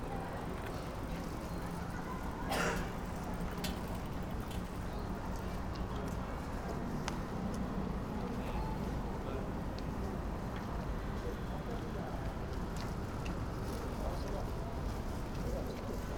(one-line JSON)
{"title": "Tokio, Arakawa, Higashinippori district, near Olympic - bikes crossing streets near convenient store", "date": "2013-03-28 19:06:00", "description": "a living neighborhood of tokyo, many people moving around riding bikes, beautiful ticking all over the place.", "latitude": "35.73", "longitude": "139.79", "altitude": "11", "timezone": "Asia/Tokyo"}